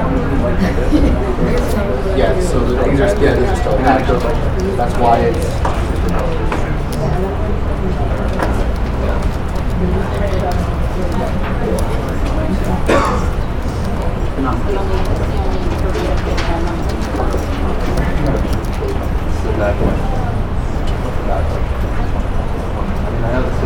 Muhlenberg College, West Chew Street, Allentown, PA, USA - In the Red Door
Muhlenberg students studying for finals in a study space the student union.
9 December 2014, 14:23